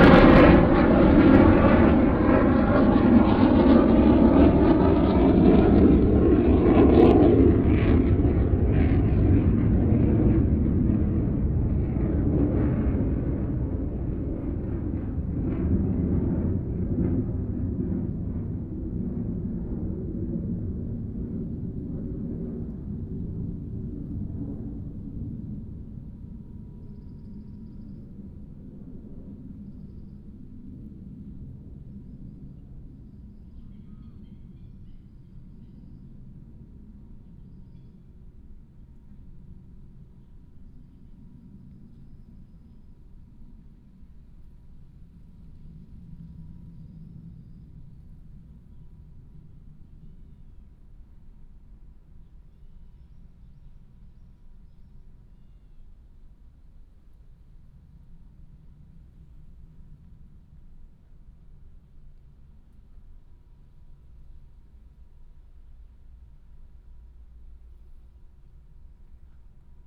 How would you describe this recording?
Fighters sound, Birds sound, Binaural recordings, Sony PCM D100+ Soundman OKM II